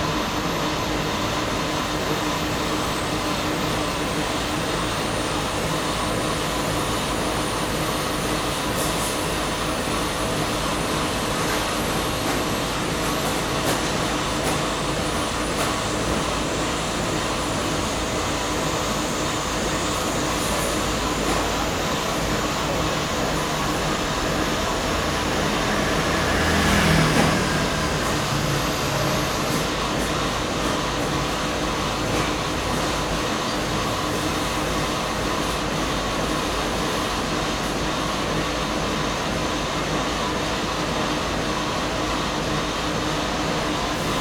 the voice of the factory, Traffic Sound
Zoom H4n +Rode NT4
Sanchong District, New Taipei City, Taiwan, 13 February 2012